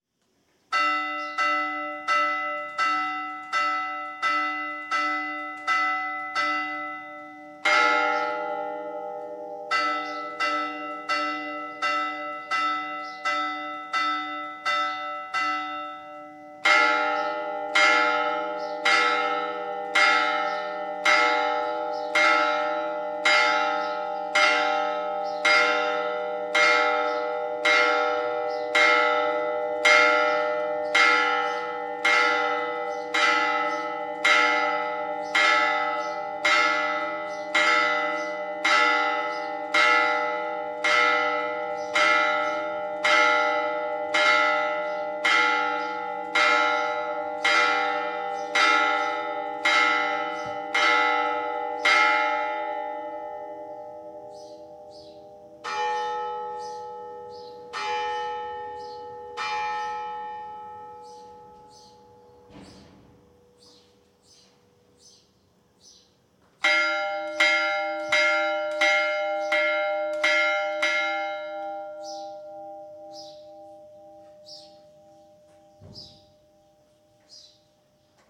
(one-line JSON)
{"title": "Costera de les Moreres, Bolulla, Alicante, Espagne - Bolulla - Province d'Alicante - Espagne - Messe de 11h", "date": "2022-07-17 11:00:00", "description": "Bolulla - Province d'Alicante - Espagne\nMesse de 11h\nPas de volée mais tintements simultanées\nZOOM F3 - AKG 451B", "latitude": "38.68", "longitude": "-0.11", "altitude": "217", "timezone": "Europe/Madrid"}